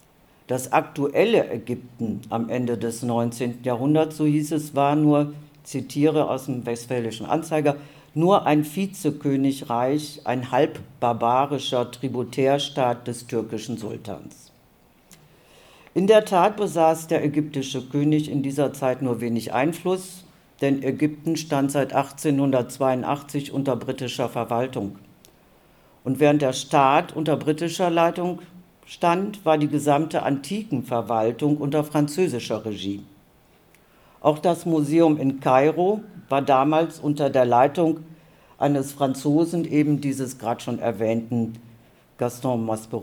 Conference Room, Technisches Rathaus, Townhall, Hamm, Germany - colonial traces in Hamm the mummy club
Der Sitzungssaal im Technischen Rathaus ist nach Corona-Standarts voll besetzt. Die Museumsarchivarin, Maria Perrefort, hat die Geschichte des Hammer Mumienvereins recherchiert und berichtet mit einigen eindruecklichen Zitaten aus der Zeit. Es geht um Spuren des Kolonialismus in Hamm. In der allerersten Veranstaltung dieser Art werden einige solcher Spuren zusammengetragen, gesichert, diskutiert. Was koennten weitere Schritte in dieser Spurensuche und Aufarbeitung sein?
The boardroom in the Technical Town Hall is full to Corona Law standards. Museum archivist, Maria Perrefort, has researched the history of the Mummy Society in Hamm and reports back with some thought-provoking quotes from the time. The evening's topic is traces of colonialism in Hamm. In the very first event of this kind, some such traces are collected, secured, discussed. What could be further steps in this search for traces and reappraisal?
For info to the event, see also